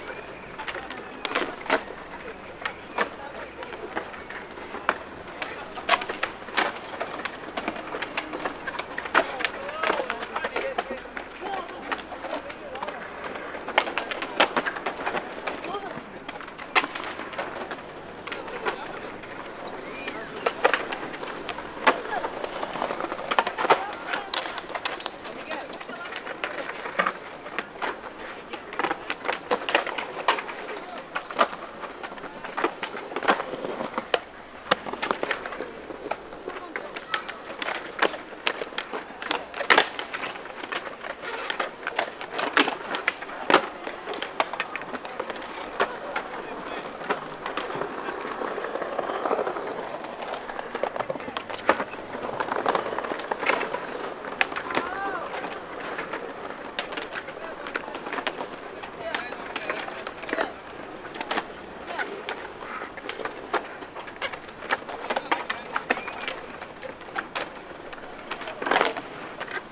casa da música, Boavista-Porto, skaters@casa da musica
Skaters rolling in front of Casa da Música Building, Porto, shouts, traffic